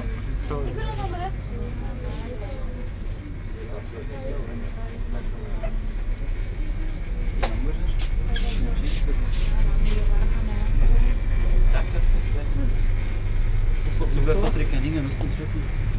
mittags im kleinen supermarkt des ortes, diverse stimmen, das brummen der kühlanlage, das klingeln der kasse
fieldrecordings international:
social ambiences, topographic fieldrecordings